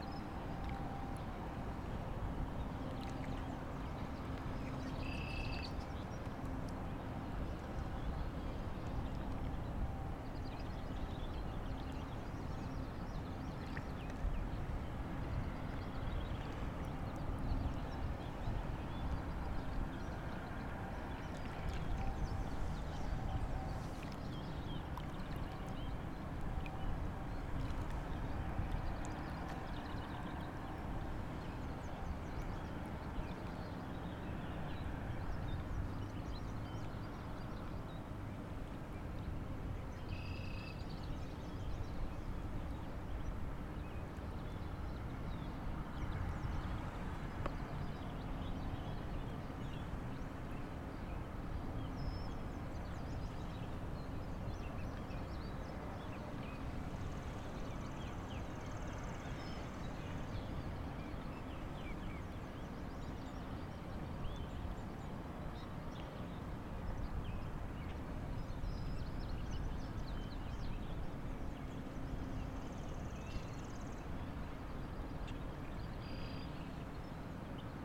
Nebraska City, NE, USA - Waterfront
Recorded with Zoom H2. Recordings from Nebraska City while in residence at the Kimmel Harding Nelson Center for the Arts in Nebraska City from May 13 – May 31 2013. Source material for electro-acoustic compositions and installation made during residency.